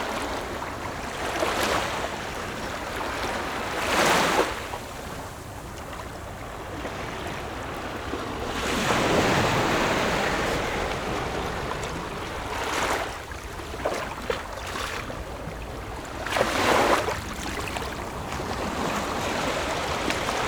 水尾, Jinshan Dist., New Taipei City - the waves
Beach, Sound of the waves
Zoom H4n+Rode NT4